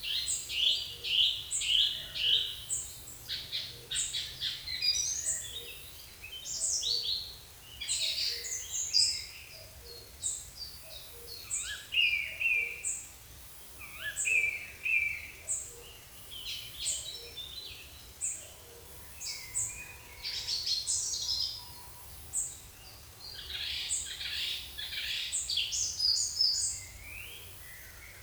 Brajni, Kastav, Blackbirds-forest - Brajani, Kastav, Blackbirds-forest
Blackbirds, cuckoo, other birds
rec setup: X/Y Sennheiser mics via Marantz professional solid state recorder PMD660 @ 48000KHz, 16Bit